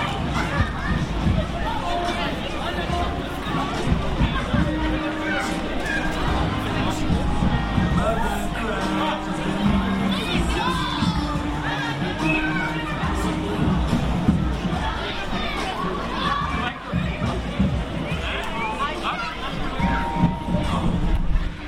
Peso da Regua, Lamego, Portugal. 23.06.2009 (the Porto wine way)
Peso da Régua, Portugal